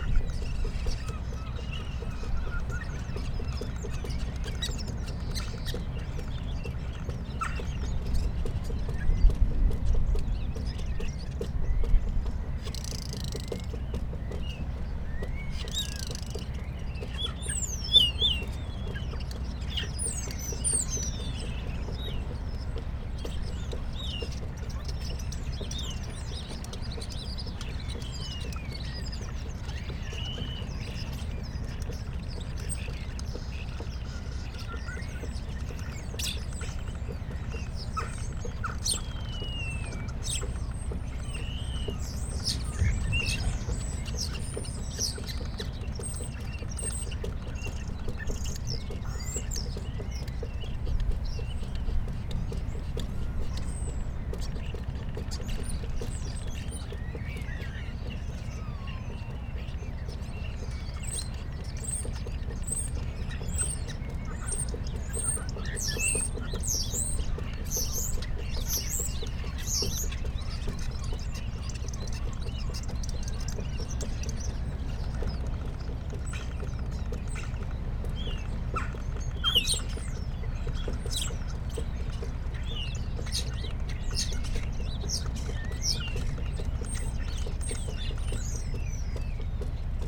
Crewe St, Seahouses, UK - starlings on the harbour light ...
starlings on the harbour light ... dpa 4060s clipped to bag to zoom h5 ... bird calls from ... herring gull ... lesser black-backed gull ... all sorts of background noises ... boats leaving harbour ... flag lanyard bouncing off flagpole ... divers preparing equipment ...